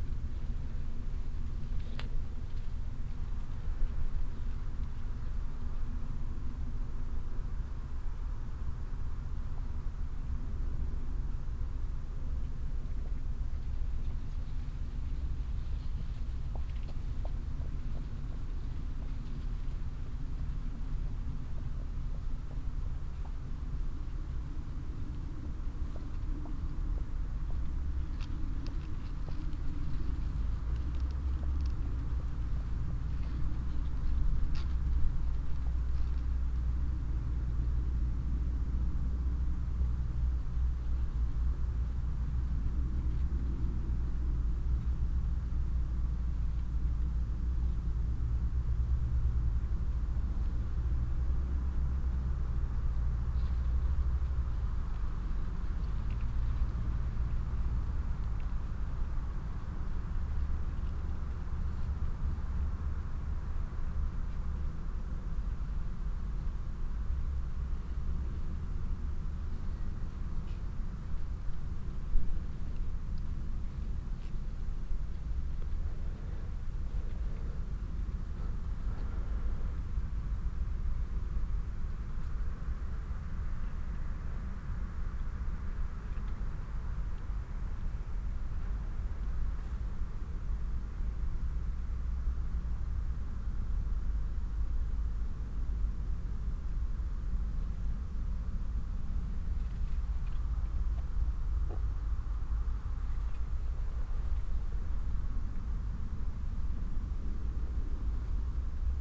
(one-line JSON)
{"title": "tandel, corn field", "date": "2011-09-17 19:46:00", "description": "Inside a corn field. The silent atmosphere and the sound of the leaves, that move in the mild late summer wind. On a path nearby a horse moving uphill. Then the sound of a plane passing the sky.\nTandel, Kornfeld\nIn einem Kornfeld. Die stille Atmosphäre und das Geräusch der Blätter, die sich im milden Spätsommerwind bewegen. Auf einem angrenzenden Weg geht ein Pferd den Hügel hinauf. Dann das Geräusch von einem Flugzeug am Himmel.\nTandel, champ de maïs\nDans un champ de maïs. L’atmosphère immobile et le bruit des feuilles que déplace le vent d’une douce journée de fin de l’été. Sur un chemin adjacent, un cheval monte dans la colline. Puis le bruit d’un petit avion traversant le ciel.", "latitude": "49.90", "longitude": "6.18", "altitude": "280", "timezone": "Europe/Luxembourg"}